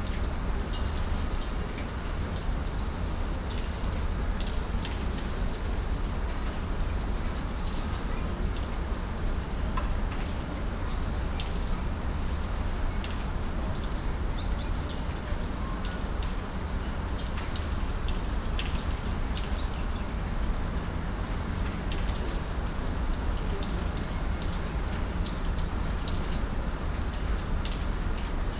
soundmap nrw: social ambiences/ listen to the people in & outdoor topographic field recordings